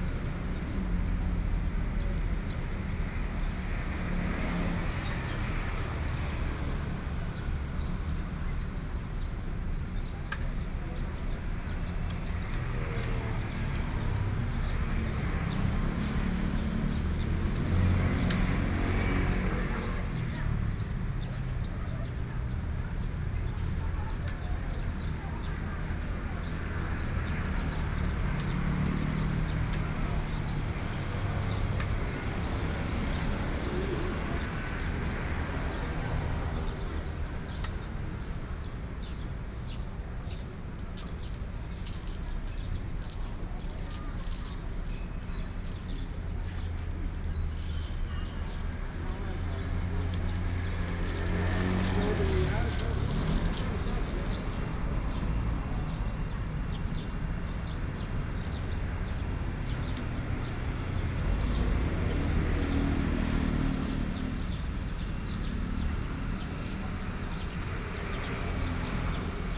Wenchang Park - Hot noon
Hot noon, in the Park, Sony PCM D50 + Soundman OKM II